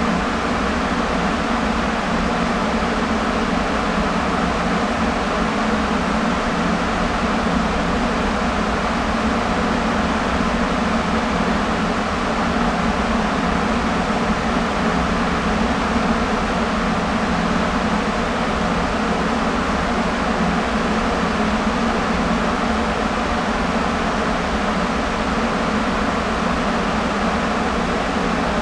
corn dryer ... 30 year old machine ... SASS on tripod ...